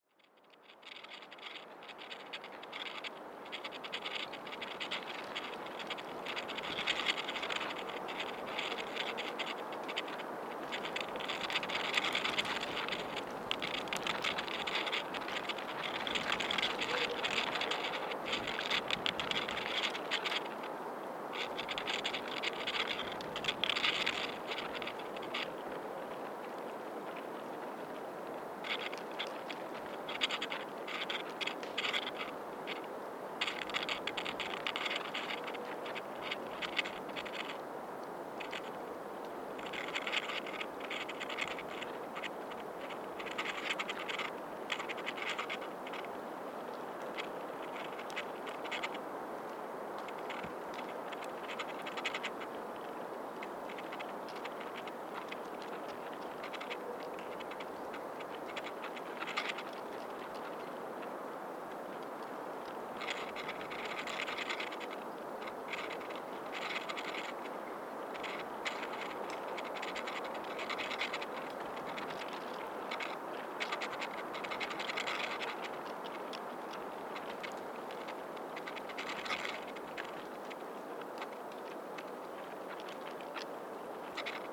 Utena, Lithuania, close to dried leaves
windy winter day. dried, curled up leaves on a branch. small mics close up